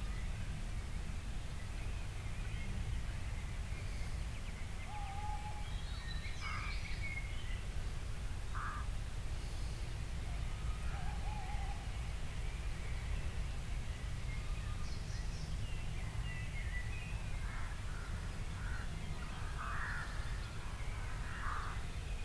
Bishops Sutton, Hampshire, UK - owls and jackdaws
This was made very early in the morning, with me and Mark both dozing in the tent and half-listening to the sounds of the early morning bird life. You can hear an owl a small distance away, our sleepy breathing, and the sound of some jackdaws. All muffled slightly by the tent... recorded with sound professionals binaural mics suspended from the top of the tent and plugged into my edirol r09.
30 April 2011